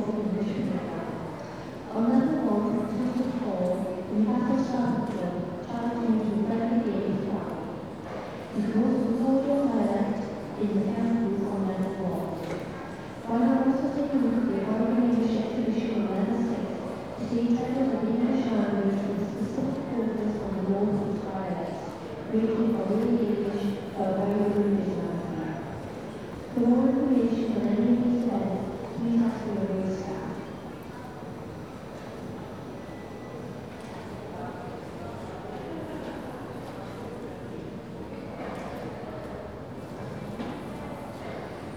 {"title": "Newcastle City Library, UK - An Announcement", "date": "2011-03-01 14:01:00", "description": "Libraryt, Tannoy, Announcement, Library, Echoes, Ambience, People talking, Background Noise, Work, Room Ambience, Atmosphere", "latitude": "54.97", "longitude": "-1.61", "altitude": "52", "timezone": "Europe/London"}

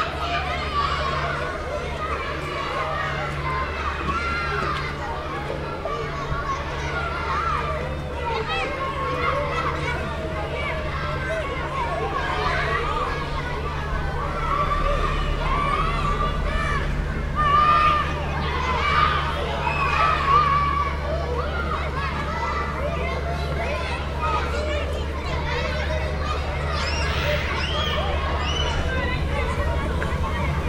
{"title": "Le Pecq, France - School", "date": "2016-09-23 10:30:00", "description": "Children are playing at school. At the backyard, the big drones of three industrial boats passing by on the Seine river.", "latitude": "48.89", "longitude": "2.11", "altitude": "27", "timezone": "Europe/Paris"}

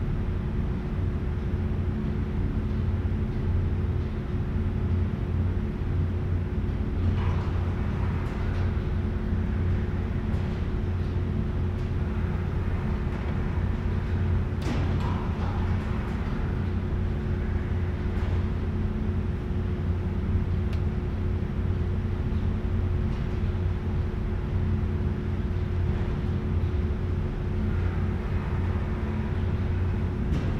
{"title": "Calgary +15 Steven Ave bridge", "description": "sound of the bridge on the +15 walkway Calgary", "latitude": "51.05", "longitude": "-114.06", "altitude": "1063", "timezone": "Europe/Tallinn"}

